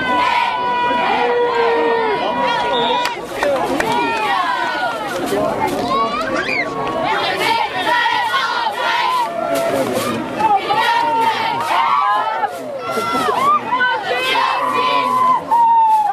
Muhlenberg College Hillel, West Chew Street, Allentown, PA, USA - Cheerleaders
Cheerleading at a game
14 November